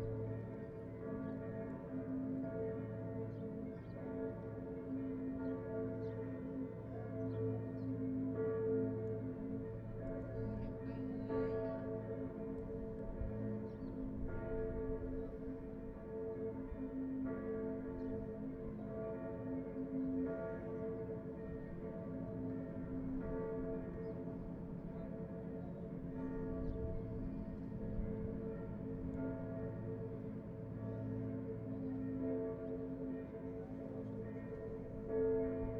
amb enregistrée au zoom H2 24/01/2010 port de marseille 10 heure